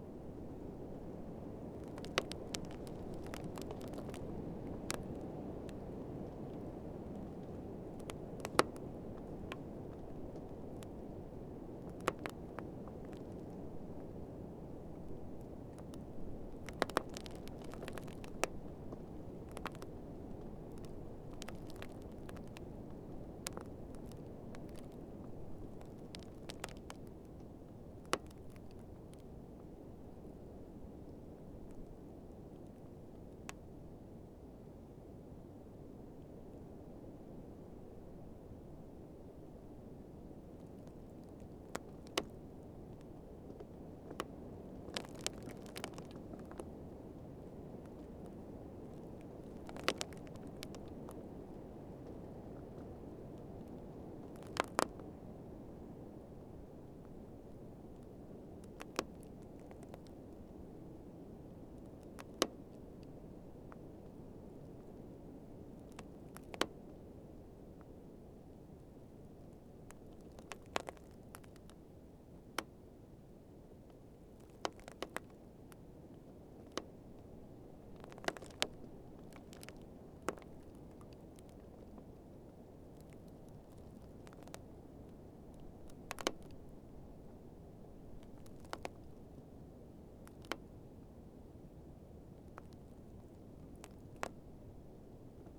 an alder tree with its roots frozen in icy ground
Utenos rajonas, Utenos apskritis, Lietuva, 16 March 2013